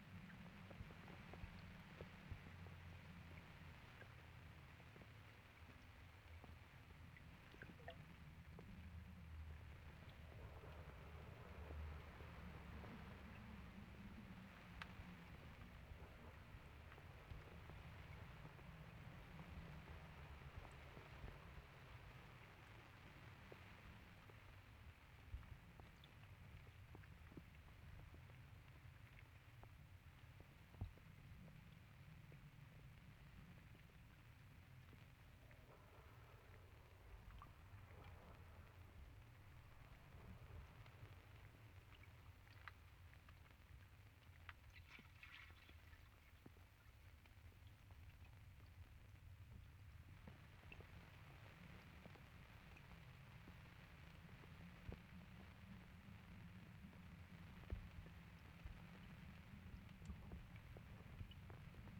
Noord West Buitensingel, Den Haag - hydrophone rec of a rain shower
Mic/Recorder: Aquarian H2A / Fostex FR-2LE